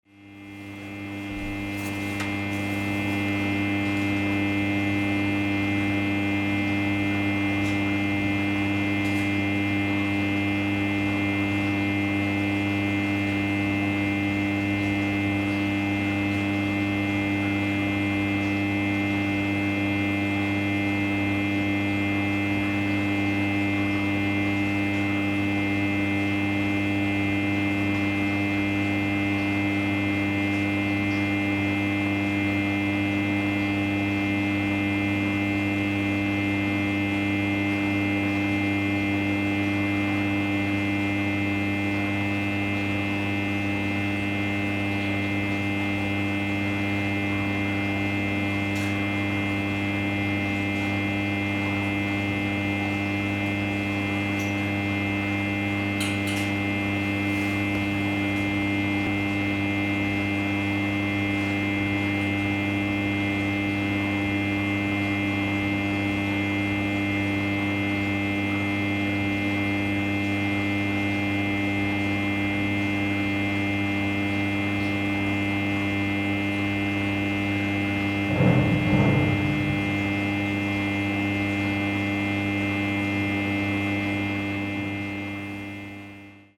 In an underground mine, a power plant alimenting two big pumps.
France, 22 November 2015